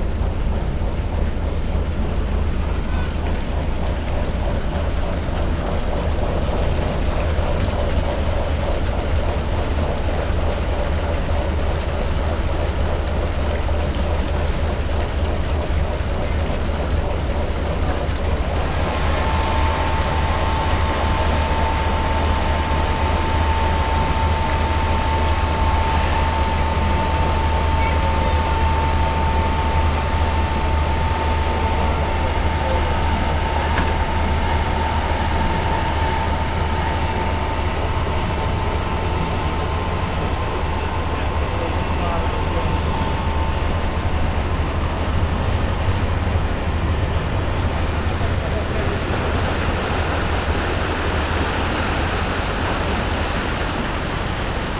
southern pier, Gdynia - onyx departure
departure of ship onyx to Hel. (sony d50)
Gdynia, Poland, 3 May 2017